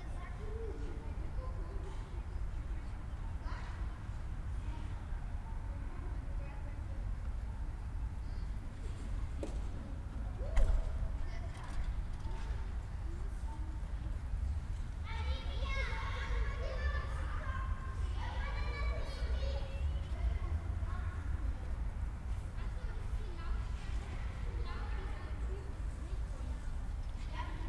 Stallschreiberstraße, Berlin, Deutschland - new building quarter
yard ambience at the newly build residential area near Jakobstr / Stallschreiberstr. A few kids playing, echos of their voices and other sounds, reflecting at the concrete walls around.
(Sony PCM D50, DPA4060)